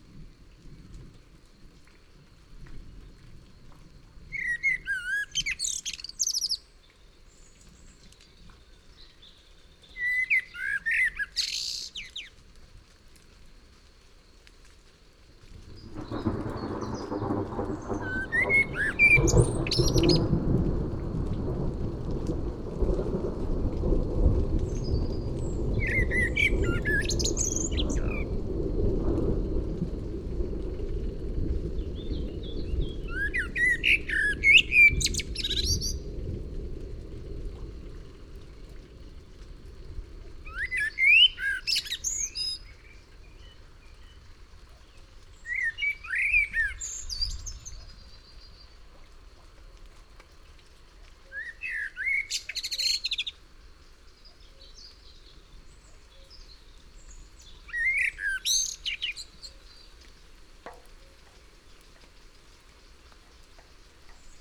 Route du Mollard, Massignieu-de-Rives, France - juin 1999 orage et merle
Merle et orage, puis grillons.
Tascam DAP-1 Micro Télingua, Samplitude 5.1